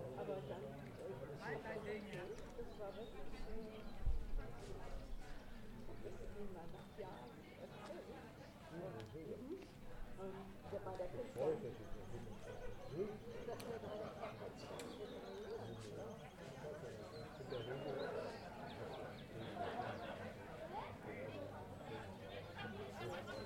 Neustadt an der Weinstraße, Deutschland - Hambacher Schloß